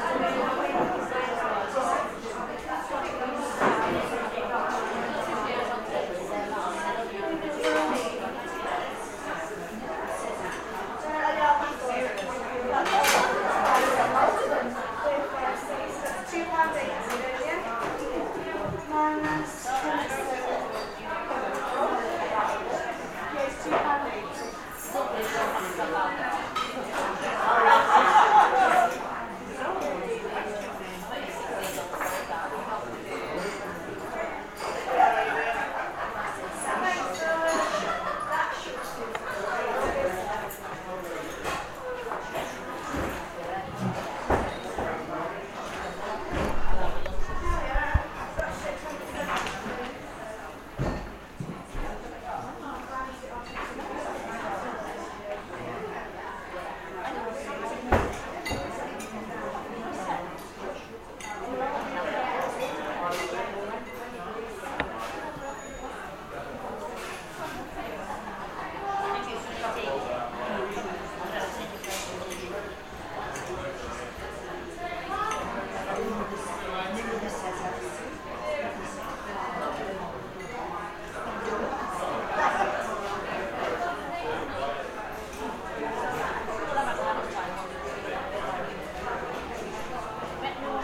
Golden Fleece Public House
Inside pub off the High Street
Derbyshire, UK